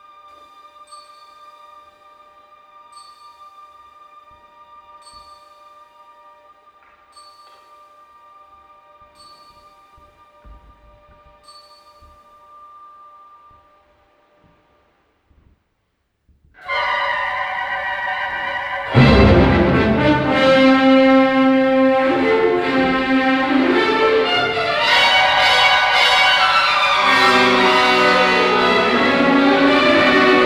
Südviertel, Essen, Deutschland - essen, philharmonie, alfred krupp concert hall, orchestra rehearsal
Im Alfred Krupp Saal der Philharmonie Essen. Der Klang einer Probe des Sinfonieorchesters Teil 2.
Inside the Alfred Krupp concert hall. The sound of a rehearsal of the symphonic orchestra - part 2
Projekt - Stadtklang//: Hörorte - topographic field recordings and social ambiences
Essen, Germany, June 2014